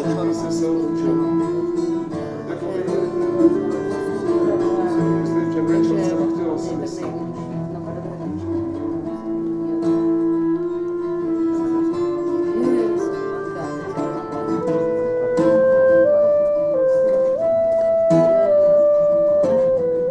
Every last Sunday of the month in the midnight there is an opening in Stolen gallery in Český Krumlov
27 November 2011